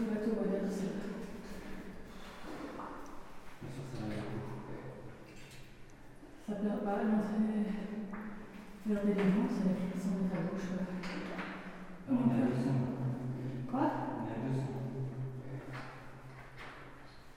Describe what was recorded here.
In the underground iron mine of Moyeuvre-Grande, walking towards the flooded part of the mine. There's a very-very strong lack of oxygen (16,4% to 15%). It's dangerous and you can hear me walking like a galley slave, with high difficulties to breathe. We know that we have no more than 10 minuts to verify the entrance of the called Delivrance tunnel, just because of the lack of oxygen. We encountered a defeat because we would need a boat. But a boat would mean more than 20 minuts, it's impossible, death would be near. The bip you hear is the oxygen detector and the level is so dreadful that we made a shut-down on the automatical alarm - it would be shouting everytime. It was, for sure, a critical incursion in this part of the mine. Finally, it took us 12 minuts to verify the impossibility to go beyond the asphyxiant gas district. Recorded binaural in a extremely harsh period, sorry that this recording is not perfect.